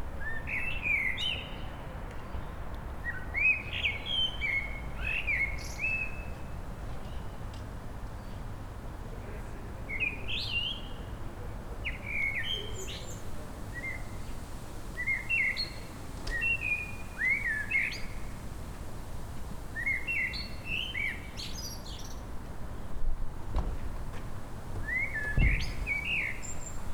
{"title": "Bratislava-Old Town, Slowakei - sokolska 01", "date": "2016-04-02 07:43:00", "latitude": "48.16", "longitude": "17.10", "altitude": "186", "timezone": "Europe/Bratislava"}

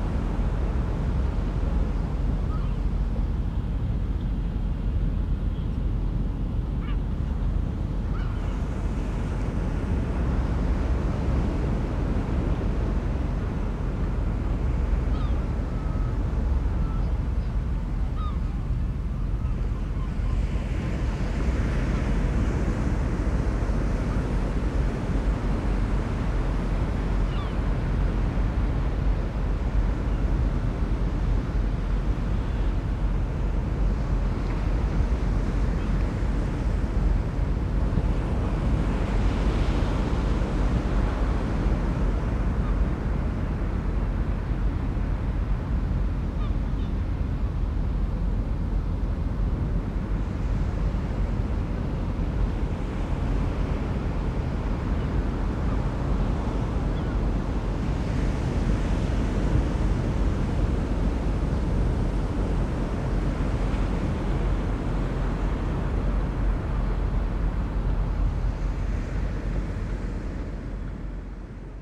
{"title": "Seaside beach Matosinhos, Portugal - Seaside beach ambience in Matosinhos", "date": "2013-04-26 15:34:00", "description": "binaural walk on the beach in Matosinhos", "latitude": "41.18", "longitude": "-8.69", "timezone": "Europe/Lisbon"}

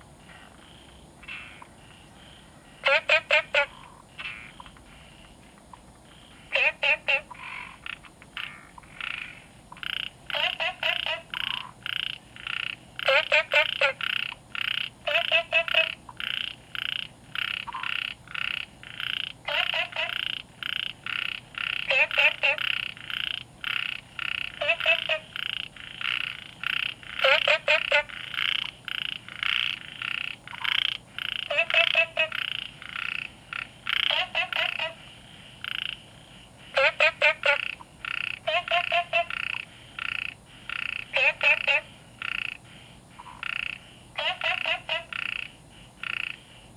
{"title": "樹蛙亭, 南投縣埔里鎮桃米里 - Frog calls", "date": "2015-08-11 19:20:00", "description": "Frogs chirping\nZoom H2n MS+XY", "latitude": "23.94", "longitude": "120.93", "altitude": "459", "timezone": "Asia/Taipei"}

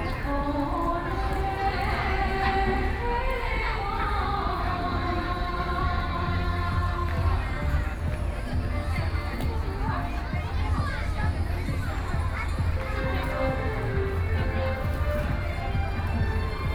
{"title": "中山公園, 羅東鎮 - Walking through the park", "date": "2014-07-27 20:00:00", "description": "Walking through the park", "latitude": "24.68", "longitude": "121.77", "altitude": "12", "timezone": "Asia/Taipei"}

